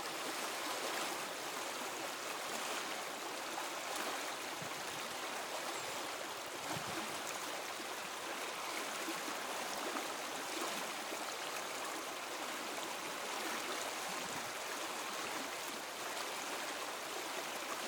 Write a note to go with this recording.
Sounding Lines is a visual art project by Claire Halpin and Maree Hensey which intends to encourage participants to navigate and encounter the River Suir in an innovative and unexpected way. Communities will experience a heightened awareness and reverence for the river as a unique historical, cultural and ecological natural resource. It is designed to take you places you might not otherwise go, to see familiar places in a new light and encourage a strong connectivity to the River Suir through sound and visuals. This project was commissioned by South Tipperary County Council Arts Service and forms part of an INTERREG IVB programme entitled Green and Blue Futures. South Tipperary County Council is one of the partners of this European Partnership Project.